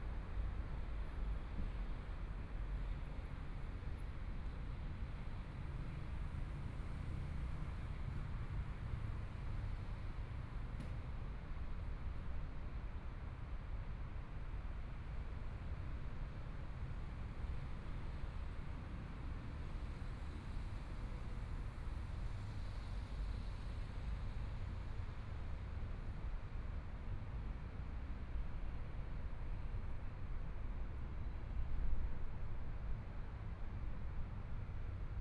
台北市中山區中央里 - Environmental sounds
Environmental sounds, The house has been demolished, Now become a temporary park, The future will be built into the building, Motorcycle sound, Traffic Sound, Binaural recordings, Zoom H4n+ Soundman OKM II